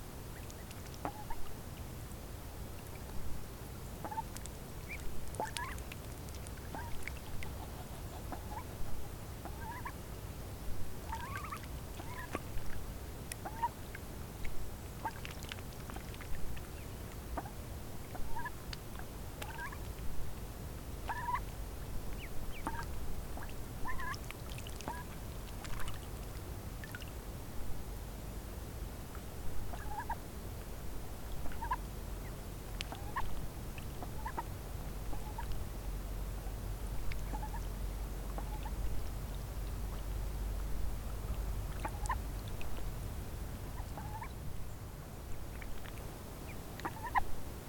Fieldrecording summer of 2014 at Rottungen in the woods of Oslo.
First the canada goose then the small ducklings with their mother.
Recorded with a Zoom H4n.
Oslo, Norway